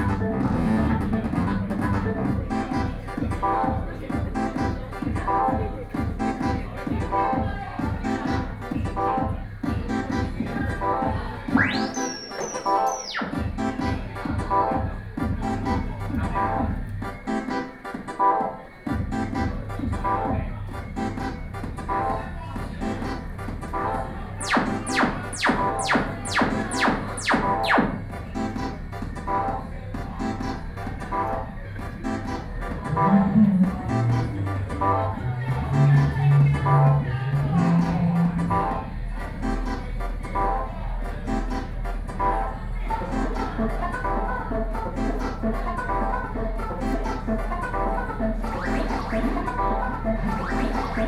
Exhibition OpeningㄝSony PCM D50 + Soundman OKM II
中正區 (Zhongzheng), 台北市 (Taipei City), 中華民國, 29 June 2013, ~21:00